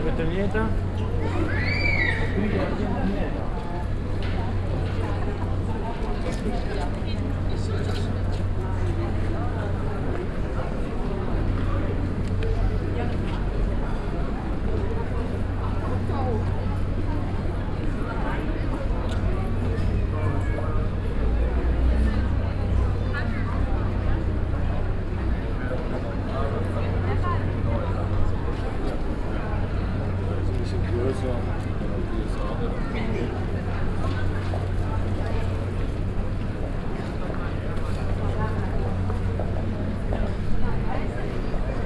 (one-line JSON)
{"title": "essen, kettwiger street, passengers", "date": "2011-06-09 11:49:00", "description": "Gehen in der Einkaufszone. Schritte und Bemerkungen von Fussgängern an einem frühen Nachmittag.\nWalking in the shopping zone.\nProjekt - Stadtklang//: Hörorte - topographic field recordings and social ambiences", "latitude": "51.46", "longitude": "7.01", "altitude": "83", "timezone": "Europe/Berlin"}